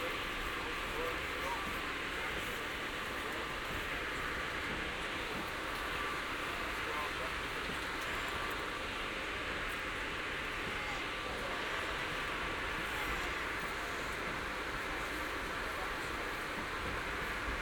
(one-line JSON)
{"title": "Montreal: Gare Centrale, inside arriving train - Gare Centrale, inside arriving train", "date": "2009-01-03 20:22:00", "description": "equipment used: M-Audio MicroTrack II w/ Soundman CXS OKM II Binaural Mic\nBinaural recording inside Amtrak train #68 (NY to Montreal) as it arrives at Gare Centrale", "latitude": "45.50", "longitude": "-73.57", "altitude": "33", "timezone": "America/Montreal"}